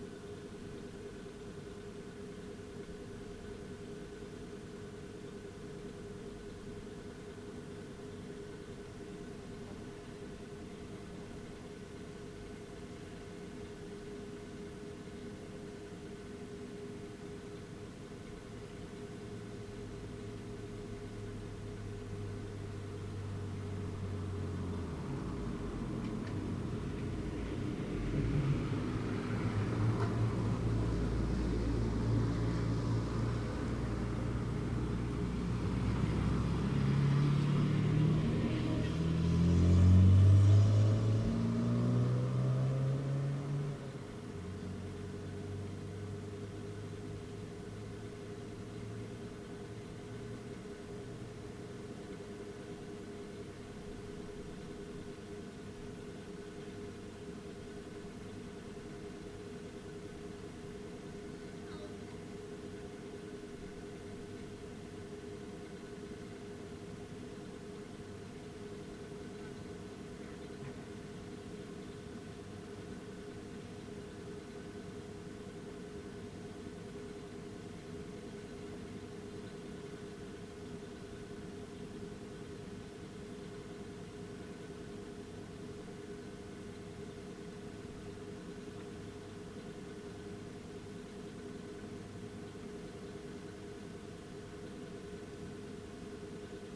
Lobster Pot car park, Dorset, UK - The noisy air vent at the Lobster Pot restaurant
In a fairly deserted spot, long after closing time, the Lobster Pot has a large ventilation shaft on its roof which emits this wheezy chord into the night. The last of the stragglers head home. Cars turn on the roundabout, kids and parents head back to their rented mobile homes or B&Bs.
23 July, 21:58